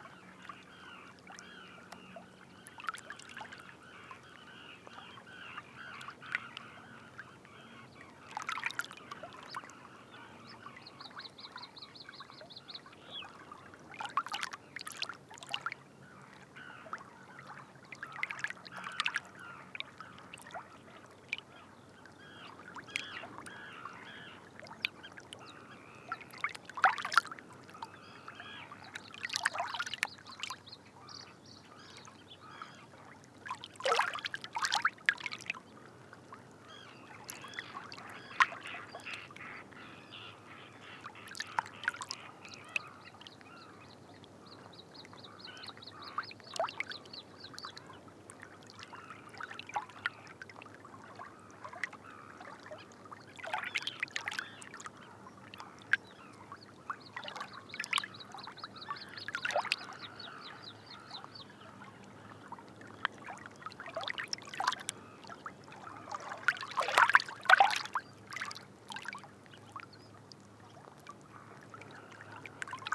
Loix, France - Salt marshes
Between the salt marshes, sound of the lapping. At the backyard : Pied Avocet, Little Egret, Black-winged Stilt and Zitting Cisticola.